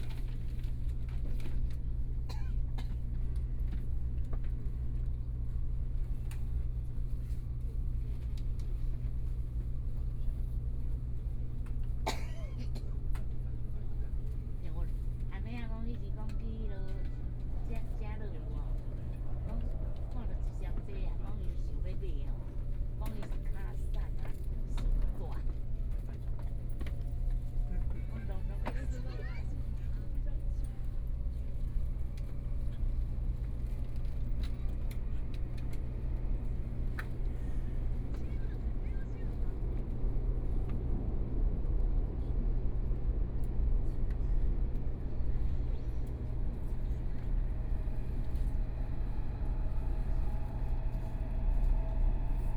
from Banqiao Station to Taoyuan Station, Binaural recordings, Zoom H4n+ Soundman OKM II